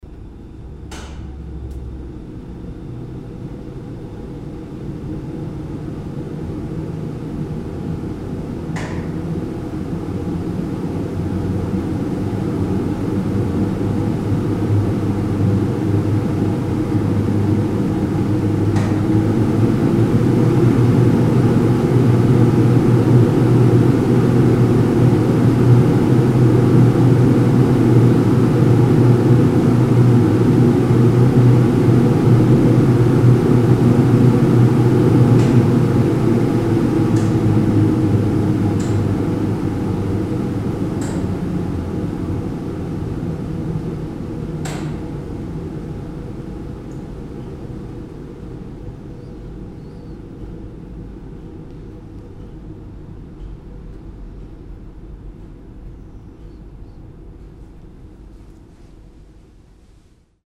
monheim, klappertorstr, fischräucherei - monheim, klappertorstr, fischräucherei, lüftung 02
einschalten und hochfahren der lüftung
direktmikrophonie stereo
soundmap nrw - social ambiences - sound in public spaces - in & outdoor nearfield recordings
klappertorstr, fischräucherei weber